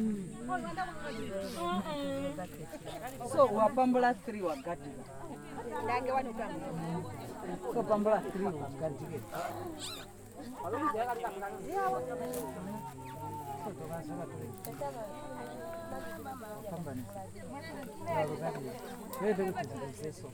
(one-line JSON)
{"title": "Siachilaba, Binga, Zimbabwe - Bunsiwa weavers workshop", "date": "2018-10-26 10:45:00", "description": "every Saturday morning, the women weavers of Bunsiwa and surroundings are meeting to weave their baskets together, help each other, exchange news and learn more from experienced weavers like Notani Munkuli. the Bunsiwa weavers are supported by Zubo Trust and, apart from selling small amounts of baskets locally, they produce large orders to be sold via Lupane Women Centre in the neighbouring district; i'm accompanying Zubo's Donor Ncube to meet the weavers and we record a number of interview on the day...", "latitude": "-17.97", "longitude": "27.26", "altitude": "555", "timezone": "Africa/Harare"}